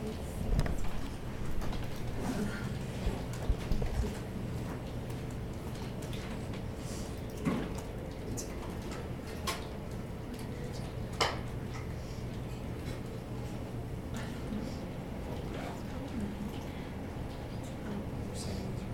University of Colorado Boulder, Regent Drive, Boulder, CO, USA - UMC Computer Lab
4 February 2013, 14:00